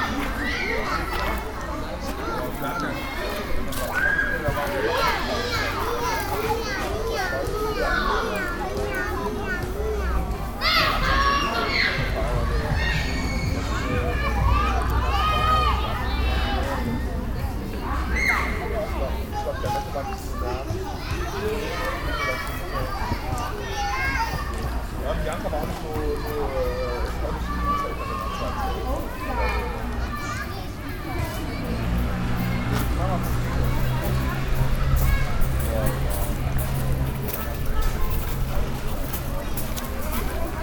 cologne, ehrenfeld, wißmannstr, playground
soundmap d: social ambiences/ listen to the people - in & outdoor nearfield recordings
19 June 2009, ~13:00